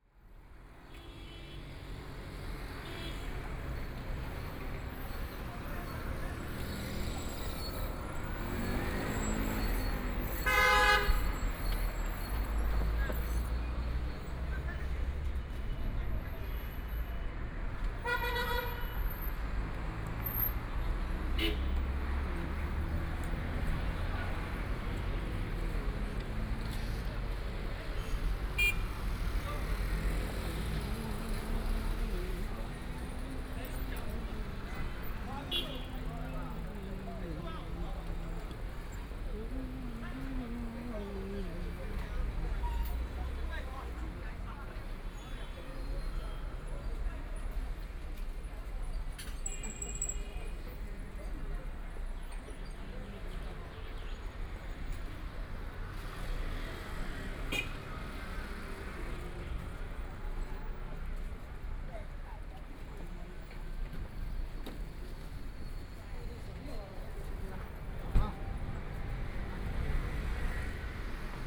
Zhejiang Road, Zhabei District - on the street
Various sounds on the street, Traffic Sound, Bicycle brake sound, Trumpet, Brakes sound, Footsteps, Bicycle Sound, Motor vehicle sound, Binaural recording, Zoom H6+ Soundman OKM II